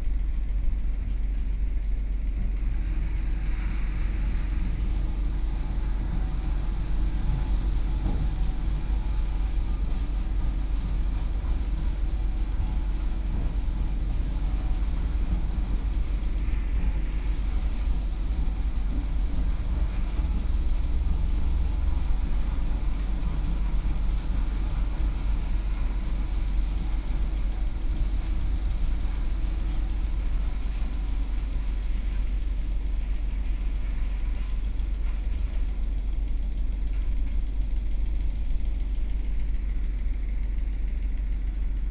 梗枋漁港, 頭城鎮更新里 - In the fishing port
In the fishing port, Traffic Sound, Birdsong sound, Hot weather, Sound of Factory